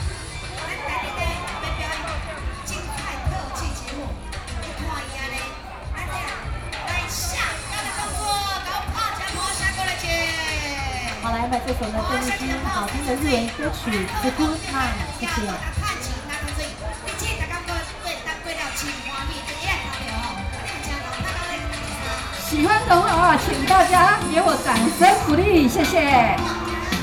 豐年公園, Beitou, Taipei City - Community party
Community party, Sony PCM D50 + Soundman OKM II